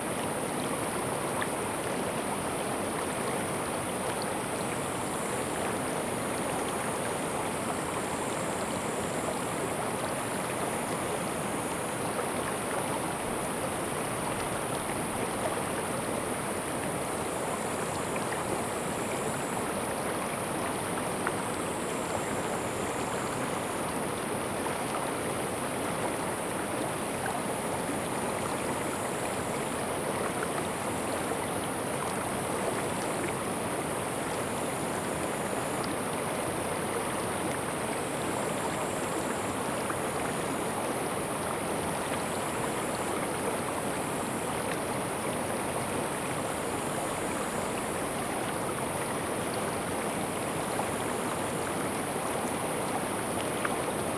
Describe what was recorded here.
Brook sound, Very Hot weather, Standing water in the middle position, Zoom H2n MS+XY